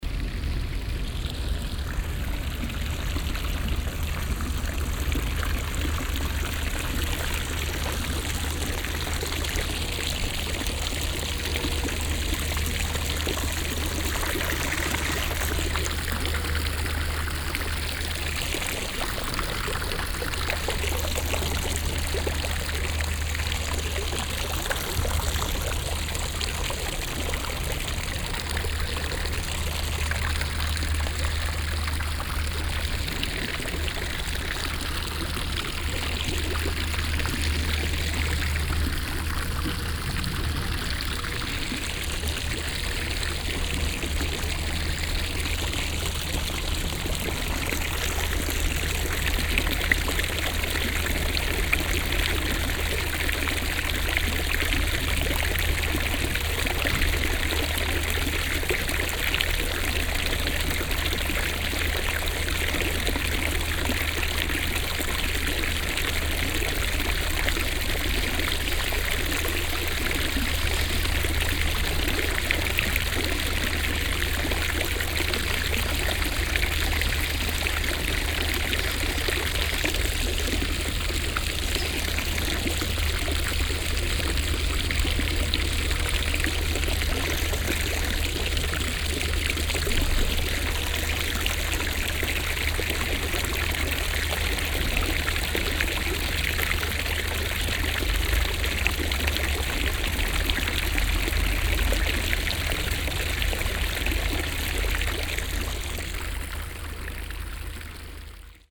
sonntag nachmittags am wasser - brunnen - spielgelände. kinder und erwachsene, dichtes treiben.
soundmap nrw: social ambiences, art places and topographic field recordings